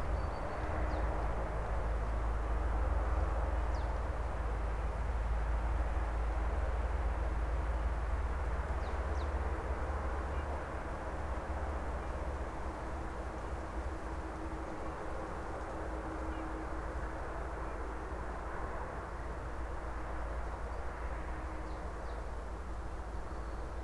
Lithuania, Atkociskes, a plane and soundscape
small plane entering autumnal soundscape
24 October, 14:15